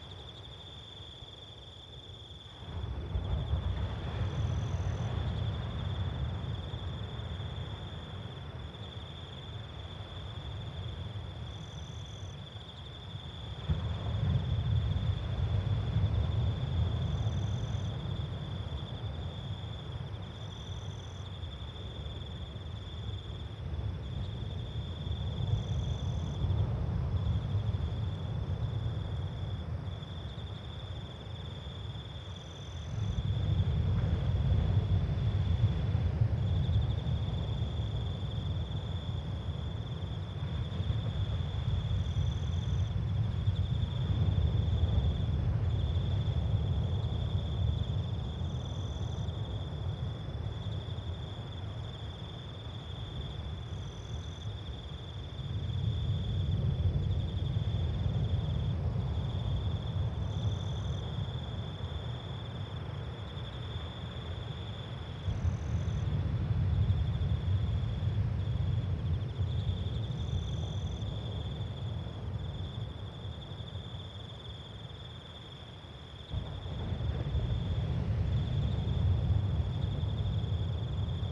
Whiritoa Evening
Of all my recordings at Whiritoa, this one reminds me the most of what it sounded like sitting on the deck of my friends beach house drinking a cool beer on a hot summers night..
13 March 2011, ~18:00